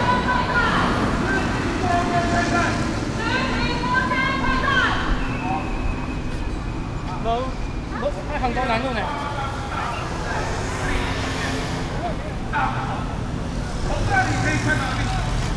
Zhongshan S. Rd., Taipei City - Protests
Labor protest, Sony PCM D50 + Soundman OKM II